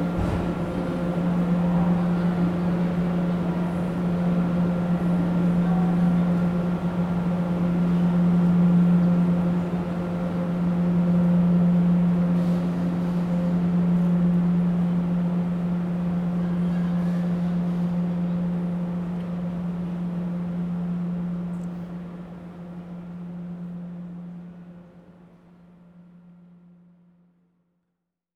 Matabiau, Toulouse, France - Gare Matabiau
Train station "Toulouse Matabiau".